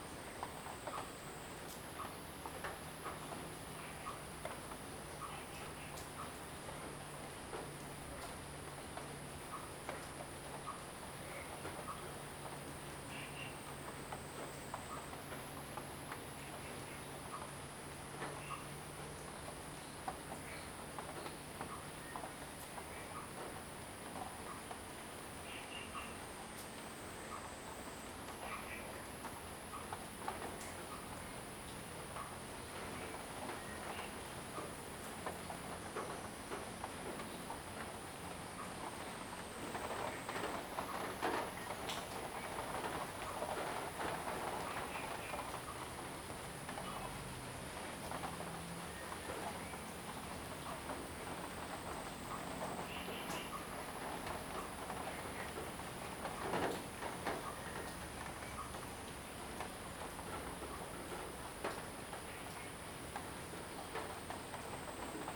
埔里鎮桃米里, Nantou County - Rainy Day
Rainy Day, Insect sounds, Frog calls
Zoom H2n MS+XY